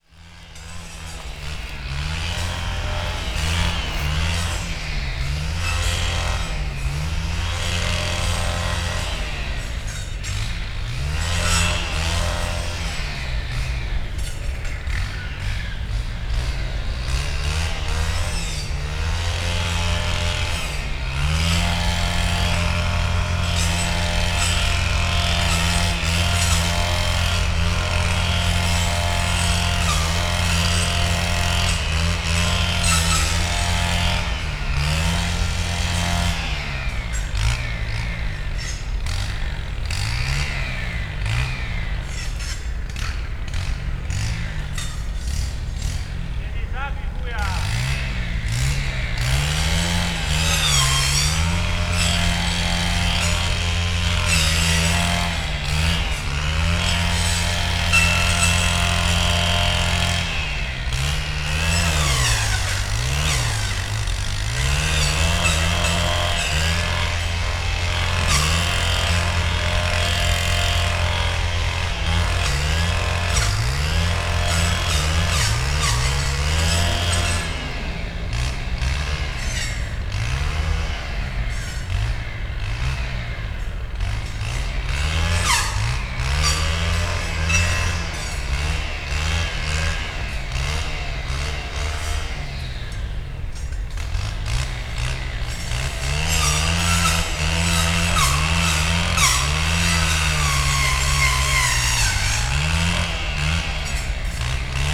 two workers operating a weed-whacker, removing dense bushes from a concrete water canal. (Roland r-07)
województwo wielkopolskie, Polska, 22 October, 11:38